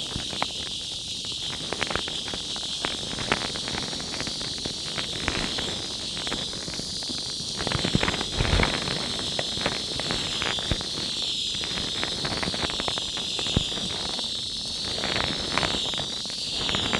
kill van kull staten island
waves and boat - hydrophone recording